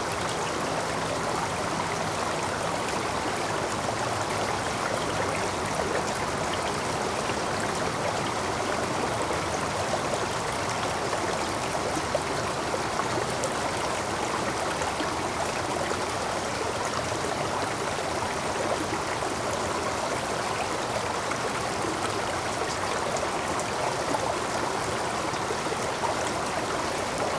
Rottenwood Creek Trail, Atlanta, GA, USA - Small Stream
This is a small stream that flows under part of the Rottenwood Creek Trail and into the river. The recorder was placed to the side of the trail right next to the stream. You can hear the water flow right to left, as well as some people people walking on the right.
This audio was recorded with the unidirectional mics of the Tascam Dr-100mkiii. Minor EQ was done to improve clarity.
Georgia, United States of America, 1 October 2020, 15:57